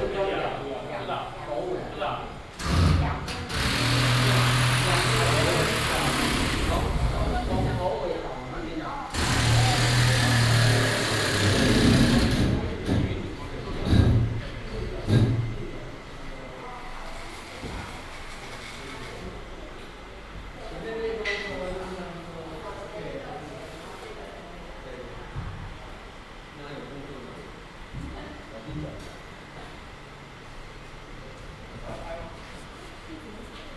Ln., Sec., Xinyi Rd., Da’an Dist. - Exhibition arrangement
Exhibition arrangement, The original staff quarters, The scene turned into art exhibition space